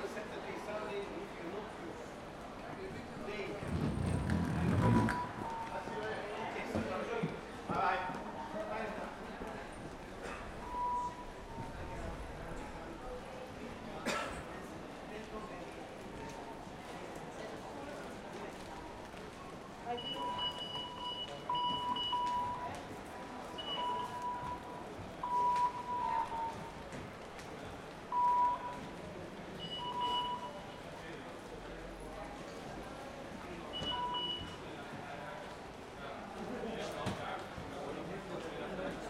Hoog-Catharijne CS en Leidseveer, Utrecht, Niederlande - rfid ticket beep 2
some steps further same passage as rfid ticket beep...